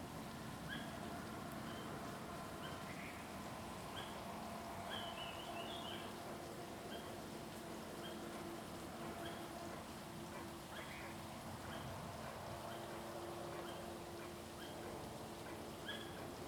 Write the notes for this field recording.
Morning in the mountains, Bird sounds, Traffic Sound, Zoom H2n MS+XY